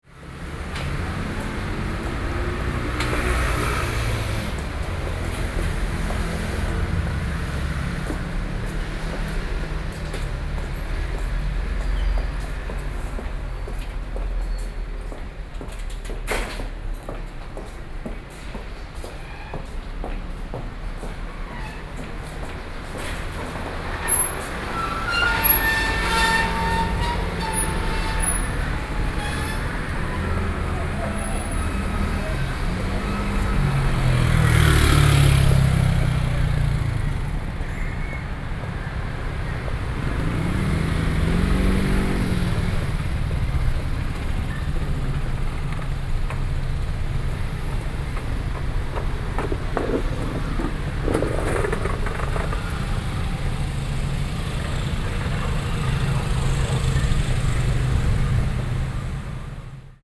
Yonghe District, New Taipei City - Follow the footsteps
Follow the footsteps, Sony PCM D50 + Soundman OKM II